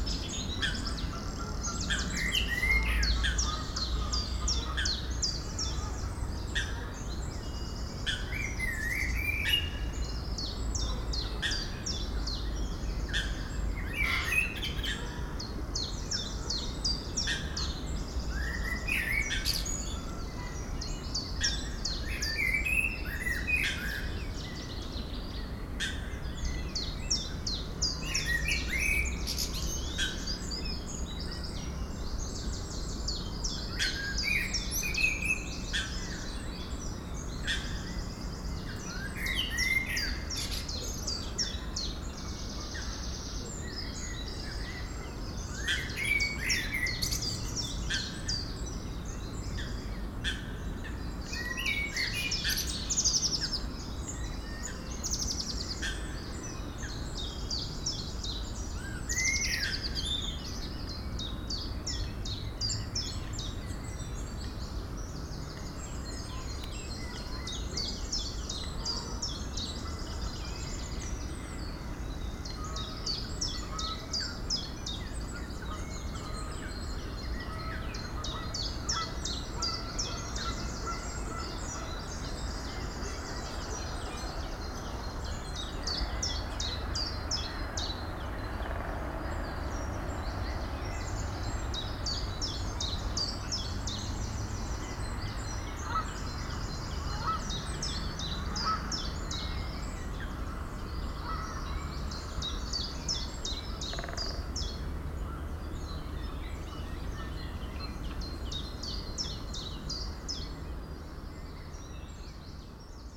Huldenberg, Belgium - Grootbroek swamp
Grootbroek is a swamp and a pond, located in Sint-Agatha-Rode and Sint-Joris-Weert. Into the swamp, distant noise of the pond, and a blackbird singing.